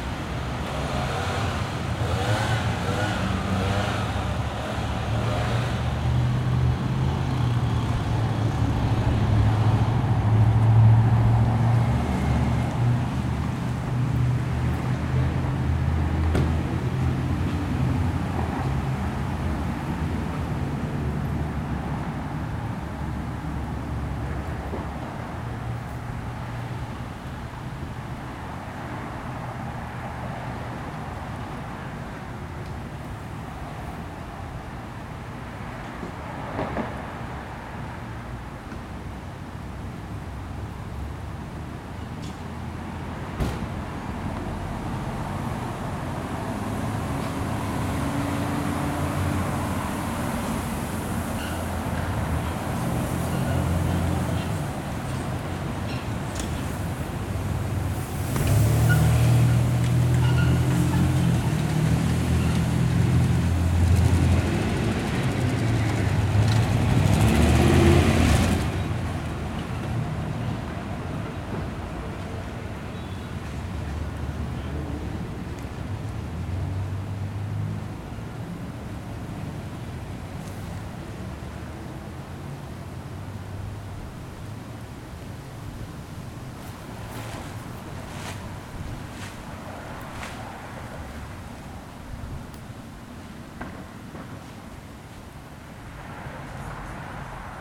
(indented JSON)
{
  "title": "Park Ave, Baltimore, MD, USA - Leaf blower and traffic",
  "date": "2016-09-17 13:00:00",
  "description": "A recording of someone using a leaf blower nearby as well as the sounds of local traffic. Recorded using the onboard H4n condensor microphones.",
  "latitude": "39.31",
  "longitude": "-76.63",
  "altitude": "46",
  "timezone": "America/New_York"
}